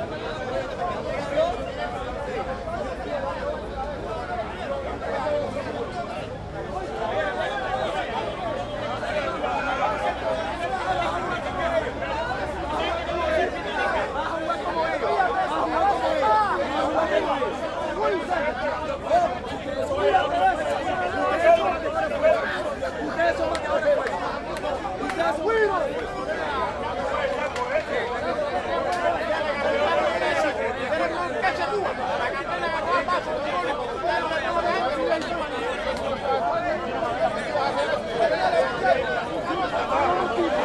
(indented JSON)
{
  "title": "Parque Centrale, Havana, Cuba - Béisbol discussions",
  "date": "2009-03-18 13:30:00",
  "description": "In Havana's Parque Central opposite Hotel Inglaterra, many men having heated discussions about béisbol.",
  "latitude": "23.14",
  "longitude": "-82.36",
  "altitude": "17",
  "timezone": "America/Havana"
}